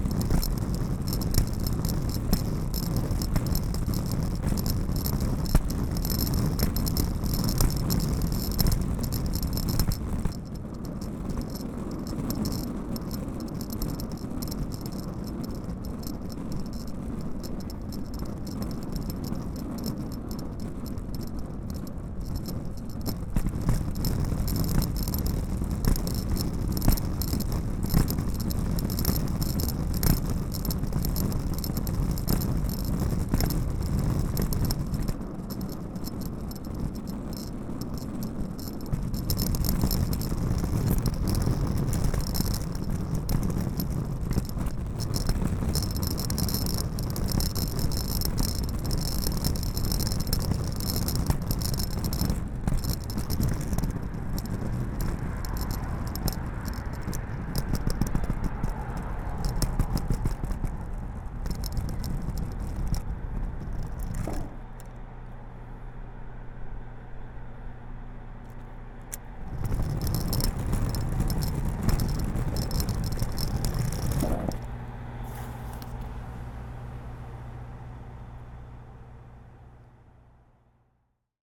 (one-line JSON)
{"title": "Nelson St, Vancouver, BC, Canada - USA Luggage Bag Drag #13 (Night)", "date": "2019-10-04 17:47:00", "description": "Recorded as part of the 'Put The Needle On The Record' project by Laurence Colbert in 2019.", "latitude": "49.28", "longitude": "-123.12", "altitude": "32", "timezone": "America/Vancouver"}